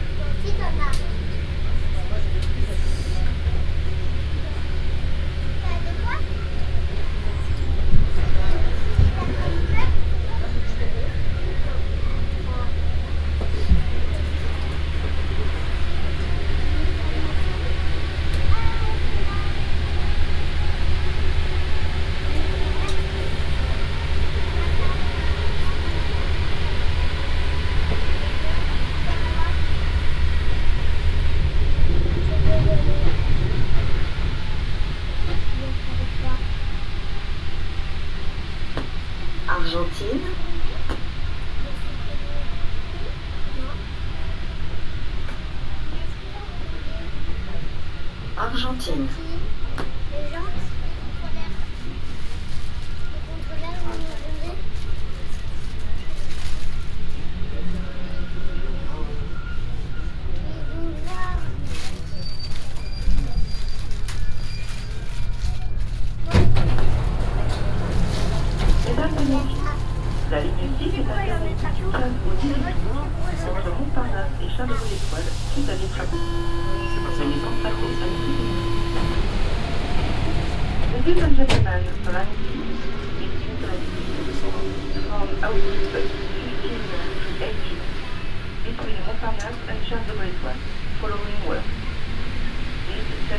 Metro Port Maillot, Paris, France - (581 BI) Metro ride Port Maillot -> Georges V
Binaural recording of a metro ride from Port Maillot to Georges V (line 1).
Recorded with Soundman OKM on Sony PCM D100.